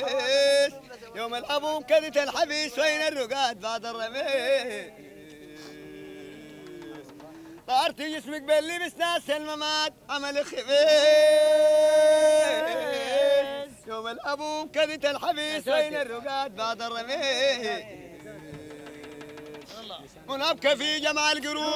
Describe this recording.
Tomb Sheik Hamad an-Nyl. In preperation of the dihkr there is singing.